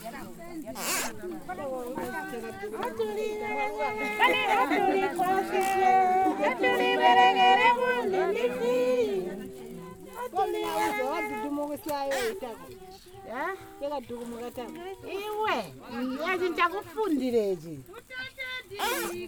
Siachilaba, Binga, Zimbabwe - Bunsiwa weavers workshop
every Saturday morning, the women weavers of Bunsiwa and surroundings are meeting to weave their baskets together, help each other, exchange news and learn more from experienced weavers like Notani Munkuli. the Bunsiwa weavers are supported by Zubo Trust and, apart from selling small amounts of baskets locally, they produce large orders to be sold via Lupane Women Centre in the neighbouring district; i'm accompanying Zubo's Donor Ncube to meet the weavers and we record a number of interview on the day...